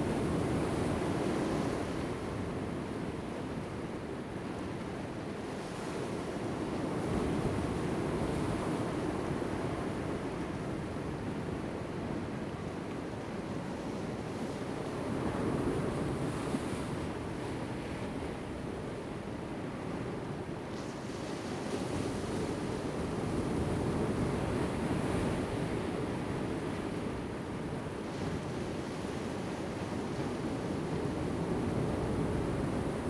將軍岩, Lüdao Township - Rocky coast
Rocky coast, sound of the waves
Zoom H2n MS +XY